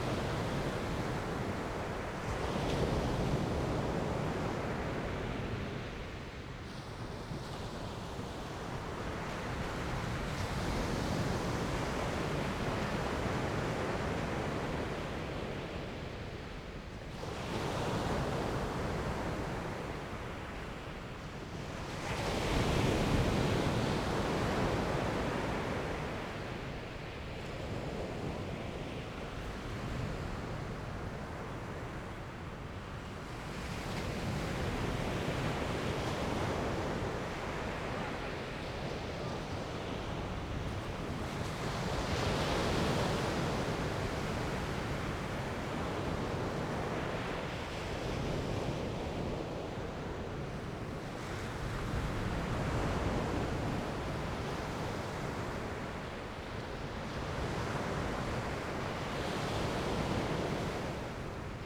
Grève rose, Trégastel, France - Peacefull high tide [Grève rose]
Soirée. Vagues calmes pendant la marée haute.
Evening. Peacefull waves during the high tide.
April 2019.